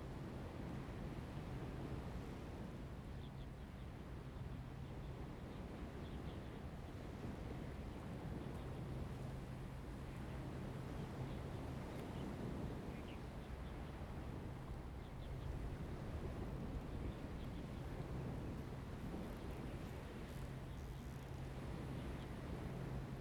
湖井頭, Lieyu Township - Birds singing and waves
At the beach, Sound of the waves, Birds singing
Zoom H2n MS +XY
福建省, Mainland - Taiwan Border, 4 November 2014